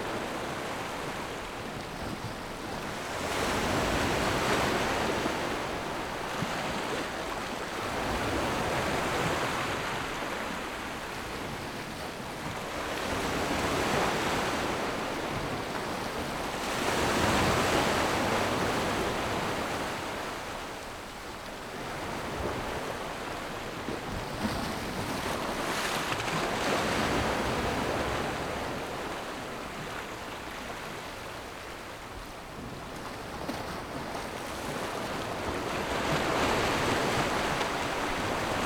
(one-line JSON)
{"title": "牛角聚落, Nangan Township - Sound wave", "date": "2014-10-14 16:45:00", "description": "Sound wave, On the rocky coast\nZoom H6 +Rode NT4", "latitude": "26.17", "longitude": "119.95", "altitude": "11", "timezone": "Asia/Taipei"}